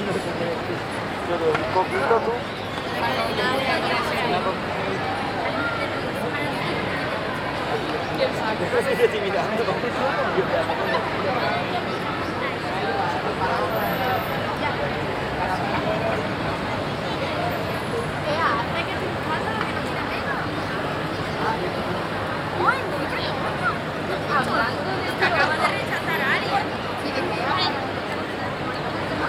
Sevilla, Provinz Sevilla, Spanien - Sevilla - Calle Campana - youth street life
In the evening in the city cenre. The sound of young people crowds in the streets walking and talking.
international city sounds - topographic field recordings and social ambiences
Sevilla, Spain, 8 October, 22:00